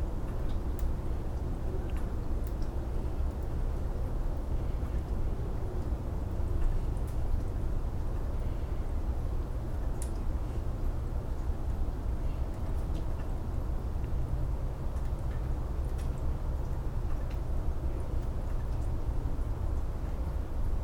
Delaware Township, NJ, USA - Night time on the farm
This recording was taken from my front porch. The temperature was above freezing as you can hear the snow melting off of the roof. There was an interesting cloud coverage, visible from the city lights of Lambertville, NJ, Doylestown, PA, and the Lehigh Valley, PA. A plane went over the house in this sound clip. Toward the end of the clip, there is an interesting hum. I am not sure what the hum is from. It is not from a highway or planes. It is either the Delaware River or wind through the trees.